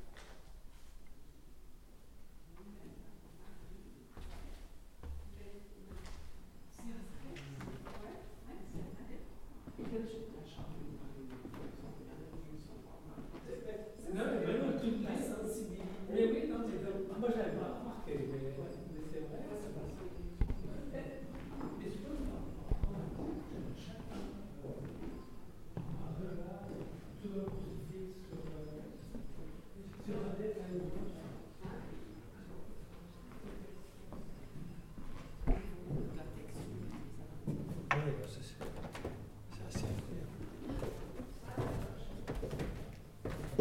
Espace culturel Assens, Rundgang Räume
Espace culturel Assens, Ausstellungen zeitgenössischer Kunst, Architektur